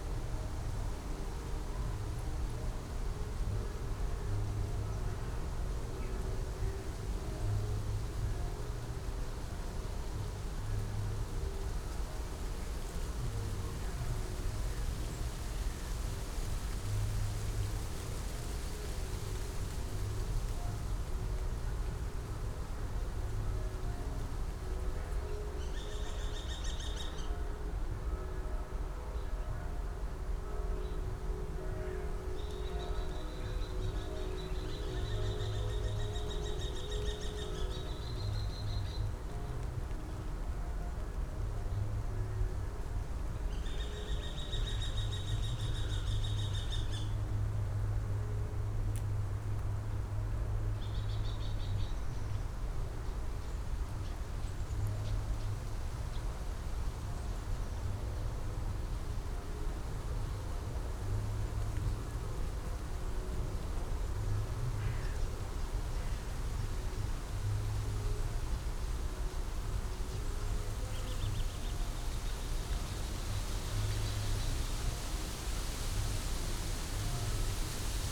Tempelhofer Feld, Berlin, Deutschland - Sunday morning, wind in poplars
autumn Sunday morning, wind and churchbells
(Sony PCM D50, DPA4060)
Berlin, Germany, 12 October 2014